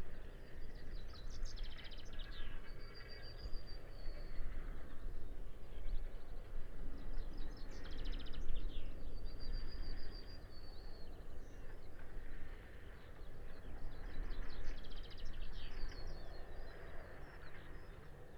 Green Ln, Malton, UK - dropping a plough ...
caterpillar tractor setting up a plough before moving off ... dpa 4060s in parabolic to mixpre3 ... bird song ... territorial call ... from ... red-legged partridge ... yellowhammer ... chaffinch ...
Yorkshire and the Humber, England, United Kingdom